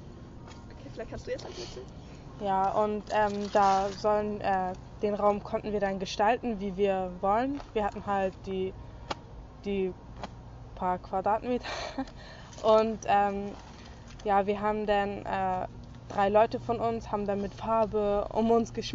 schülerInnen erzählen von mangelndem respekt ihrer künstlerischern arbeit gegenüber und fordern atelierräume für das gängeviertel in der innenstadt und für sich in wilhelmsburg..